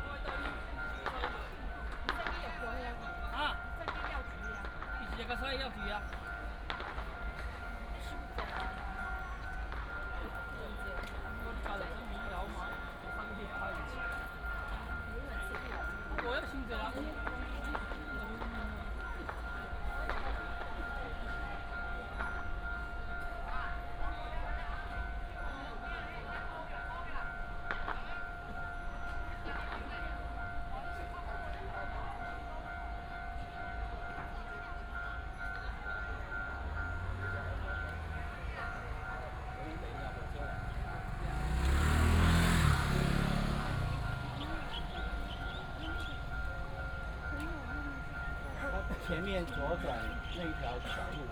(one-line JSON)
{"title": "白西, 苗栗縣通霄鎮 - Walking in the small village", "date": "2017-03-09 10:56:00", "description": "Walking in the small village, Fireworks and firecrackers, Traffic sound, Many people attend the temple, The train passes by", "latitude": "24.57", "longitude": "120.71", "altitude": "8", "timezone": "Asia/Taipei"}